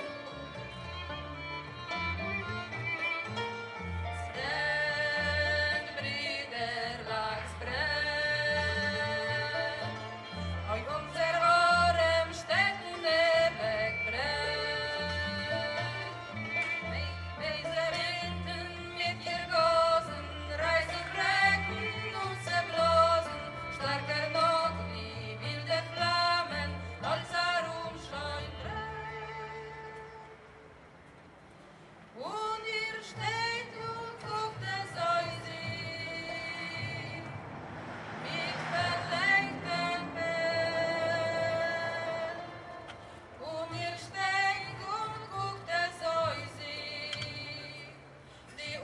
Rynek Kościuszki, Białystok, Poland - Wschód Kultury - Inny Wymiar 2018 cz.5
30 August 2018